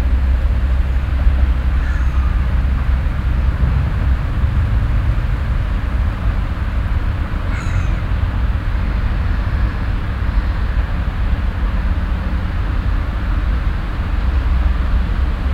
Düsseldorf, Hofgarten, Landskrone, Seeufer
Mittags am Seeufer der Landskrone - dichtes Treiben des Seegefieders, Möwen, Enten, Schwäne, Perlhühner - das Rauschen des Strassenverkehrs
soundmap nrw: social ambiences/ listen to the people - in & outdoor nearfield recordings